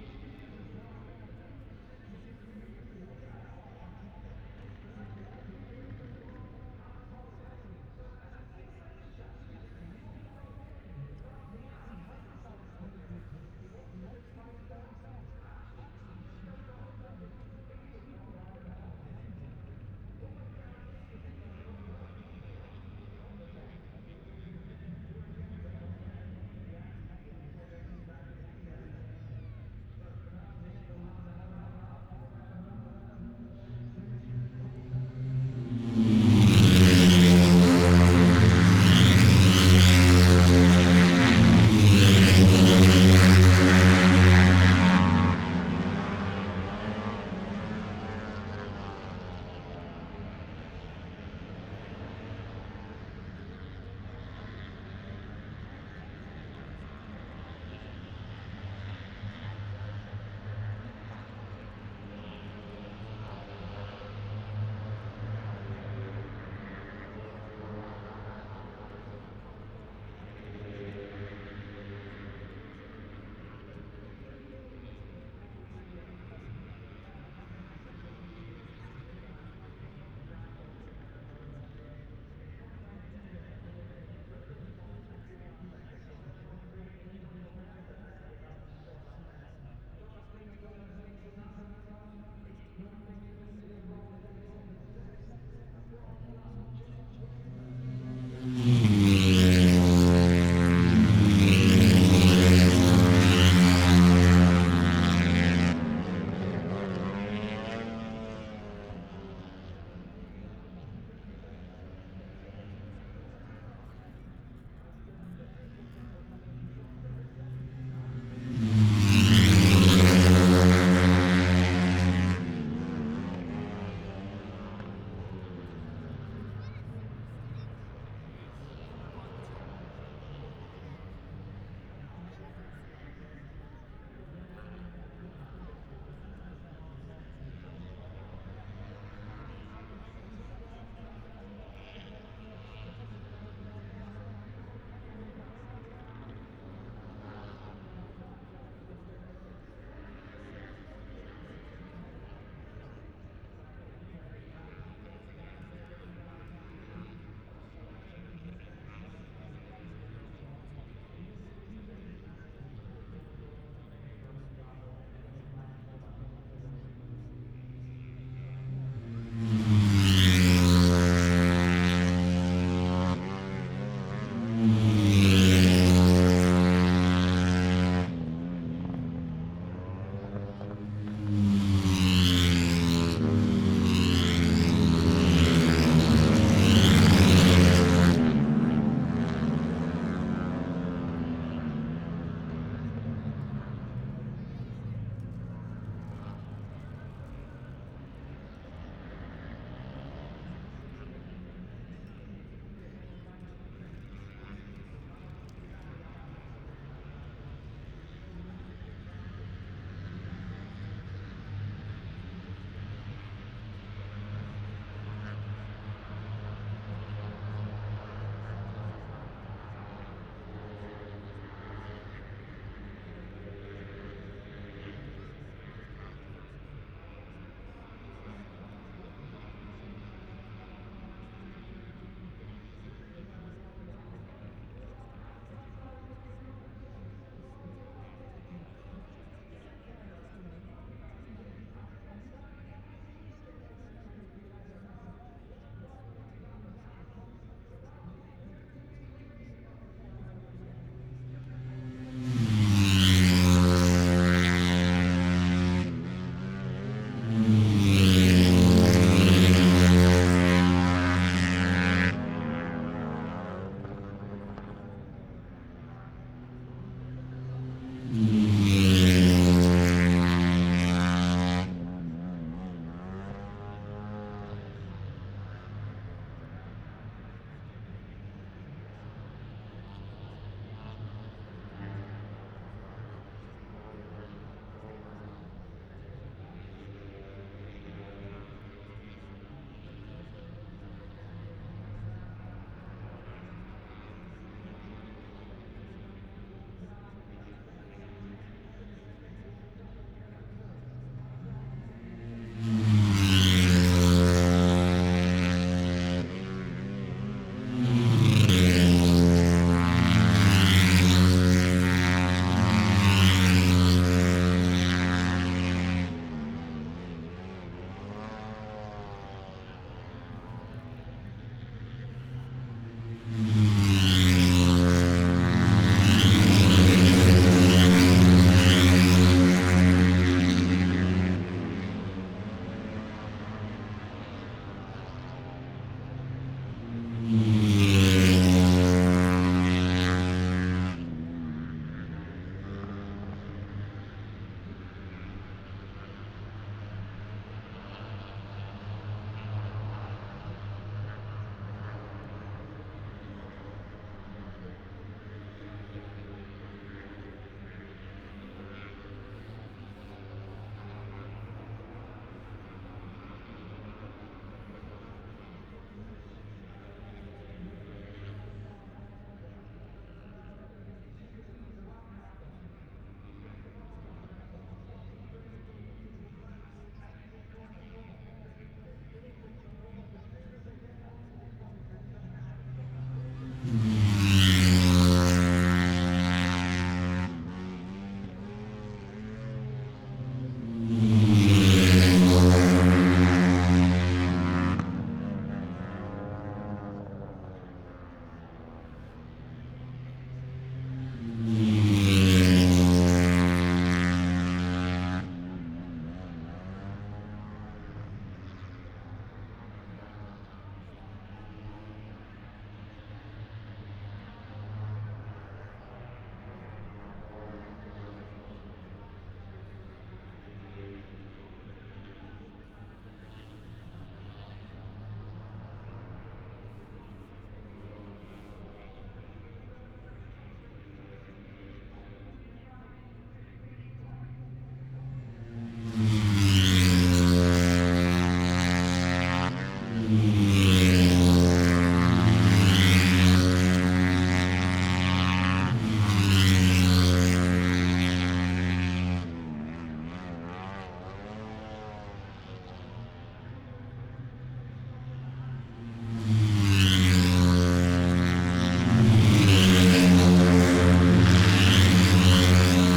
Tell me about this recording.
moto three qualifying two ... wellington straight ... dpa 4060s to MixPre3 ...